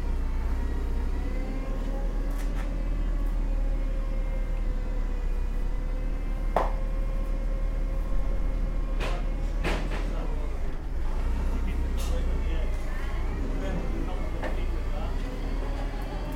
Shetland Islands, UK - Graded wool being loaded onto the lorry for scouring
This was recorded outside Jamieson & Smith, towards the end of the working day, as bales of graded wool were being loaded into a lorry ready to be driven South to Bradford, and scoured at Curtis Wool. You can hear some banter between Oliver Henry and co-workers as they load and secure the bales into the lorry. Sandra Mason - who works at J&S and is a legendarily talented knitter and designer - is leaving work, and we greet each other briefly in this recording. (Sandra Manson is the genius behind the beautiful lace christening robe recently presented to HRH The Prince of Wales.)
31 July